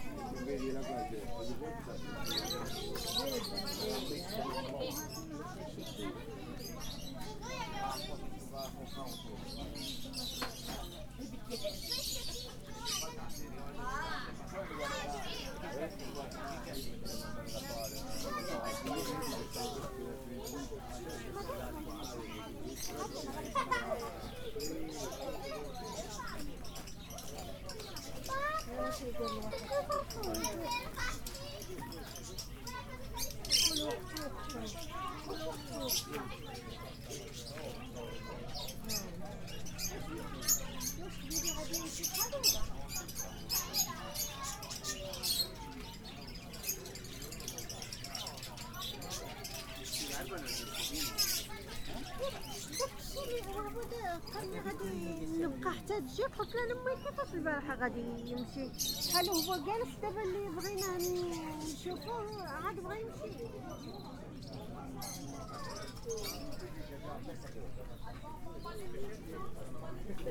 Via Federigi 55047 Querceta (LU) - Italy - A walk at the fair. Fiera di S.Giuseppe, Querceta. Birds sellers.
A walk through the fair. The birds sellers. A north african woman on the phone.
19 March 2018, 11:30